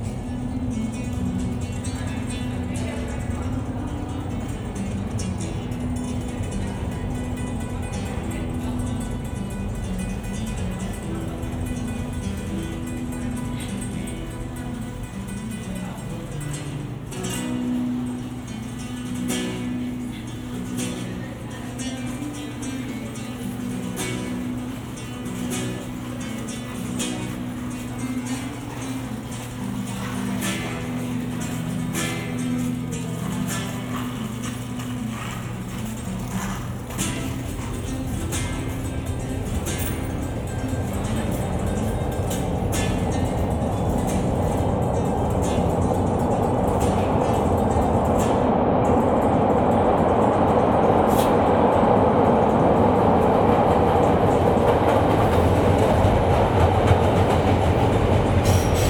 The Loop, Chicago, IL, USA - washington subway
this is a daily walk to the blue line recorded on a binaural mic. that being said it's best listened to with headphones.